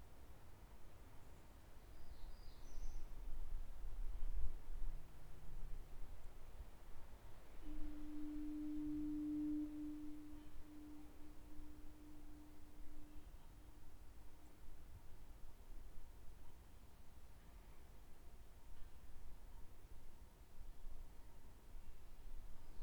Buoy, Ucluelet, BC
Noises from distant buoy, boat and bird in fog
BC, Kanada, 7 June